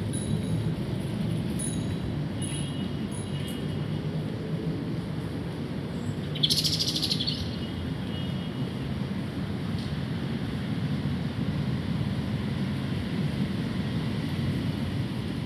Giardino Garibaldi, Palermo - Wildways Residency Walk Score 3
A section of audio was taken at this location in response to an experimental score provided by Sofie Narbed as part of the Wildways: People Place and Time online residency; "Take your attention to the surfaces that surround you, their spread and lines and textures. Mould your body to a surface. You could stand or lie or go upside-down or roll maybe. Try and stay connected with the surface for a few minutes if you can. What are things like from here?". The recording was taken from a position with my back pressed against the bark of a tree and the microphones placed on the bark of the tree at ear height. Ambient recording at this location using a Zoom h5 and a matched pair of Clippy EM272 high sensitivity omni-directional low noise microphone's.